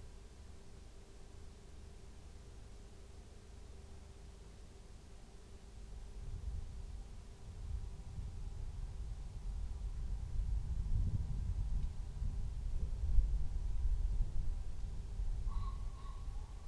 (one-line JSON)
{"title": "Luttons, UK - tawny owls and thunderstorm ...", "date": "2020-05-10 01:40:00", "description": "tawny owls calls and approaching thunderstorm ... xlr SASS on tripod to Zoom H5 ... bird calls ... pheasant ... little owl ... red-legged partridge ... there is clipping ... unattended recording ... first real thunderclap at 17:20 ... still don't know why low level rumbles set the pheasants calling ... not one or two ... most of them ... 0", "latitude": "54.12", "longitude": "-0.54", "altitude": "76", "timezone": "Europe/London"}